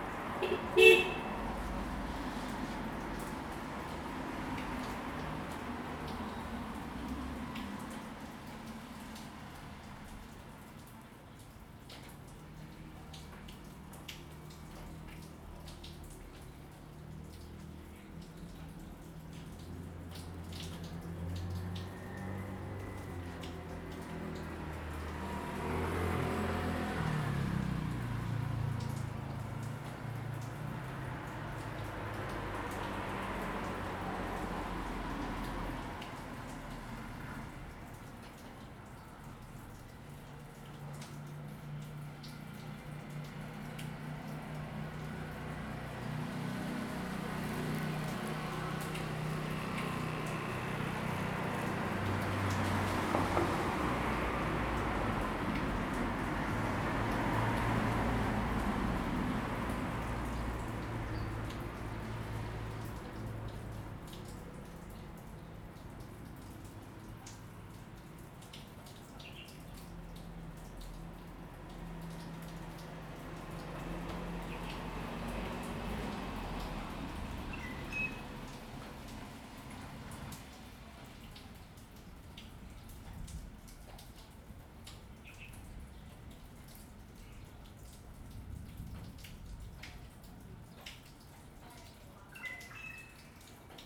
長濱鄉公所, Changbin Township - Rain
Rain, In the Square, Traffic Sound, Birds singing, Raindrops sound
Zoom H2n MS+XY
Taitung County, Changbin Township